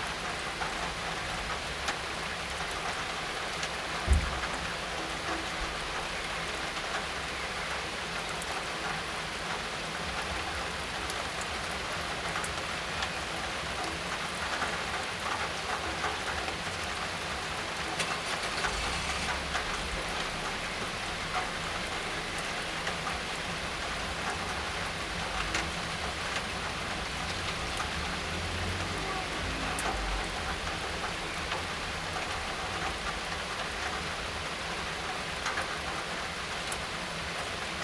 Binaural recording with Soundman OKM and Zoom F4 Field Recorder. Best experienced with headphones.
Occasional vehicular engine in sound.
6 June, 10:24